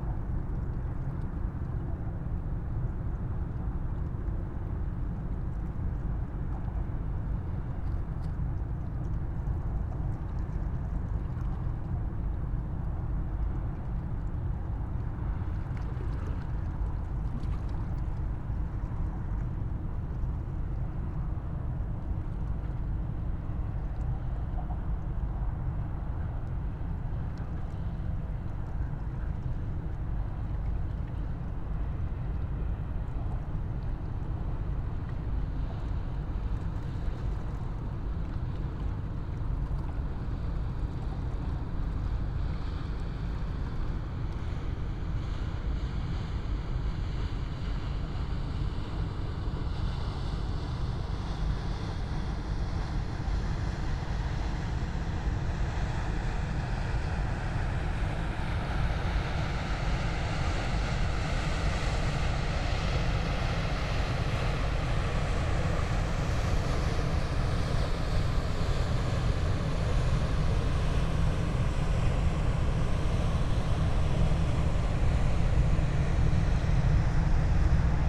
Rhein river banks, Riehl, Köln, Deutschland - ship drone
Köln, river Rhein, ships passing-by, drone of engines, waves
(Tascam iXJ2 / ifon, Primo EM172)
Nordrhein-Westfalen, Deutschland, 10 September 2019